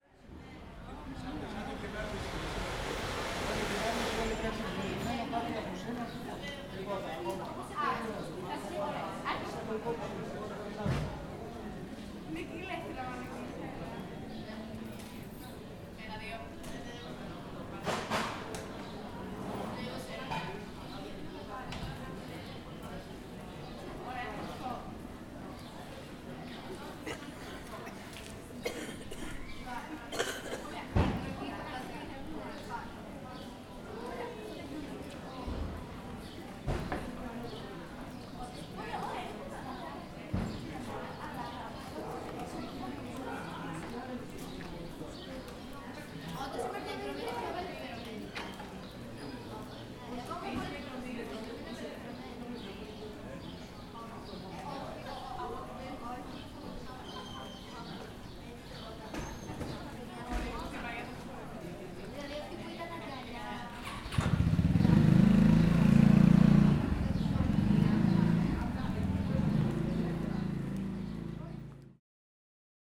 Velissariou, Corfu, Greece - Velissariou Square - Πλατεία Βελισσαρίου
Locals chatting. A motorbike's engine in the background.
Kerkira, Greece, 17 April 2019